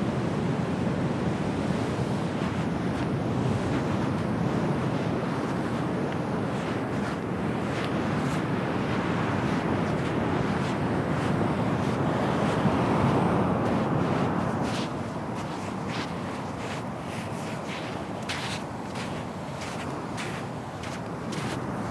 Prainha, Ceará, Brazil - Walking on the beach Prainha
Recording the sound environment of the beach scene from the movie "O que tem na caixa?" directed by Angelica Emilia. Sound recorded by Mapurunga Marina.